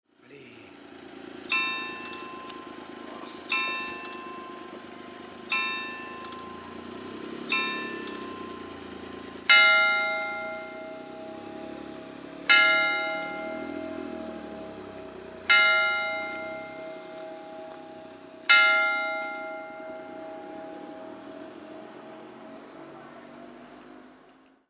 Collserola, Petita Campana de la Vil·la Joana
Villa Joana's little bell
8 December 2009, 15:00